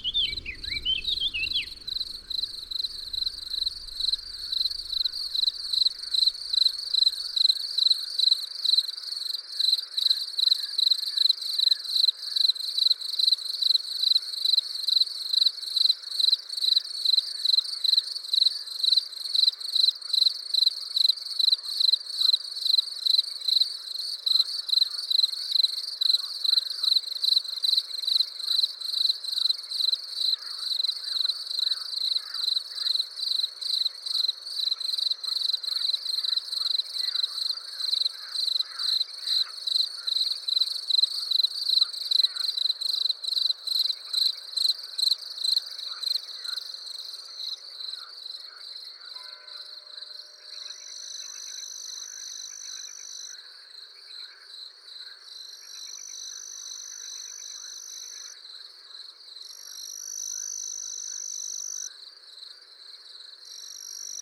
Route du Mollard, Massignieu-de-Rives, France - juin 1999 orage et merle
Merle et orage, puis grillons.
Tascam DAP-1 Micro Télingua, Samplitude 5.1